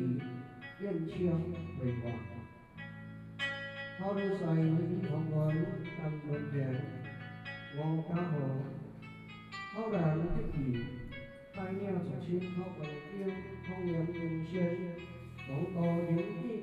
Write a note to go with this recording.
Traditional funeral ceremony in Taiwan, Sony PCM d50 + Soundman OKM II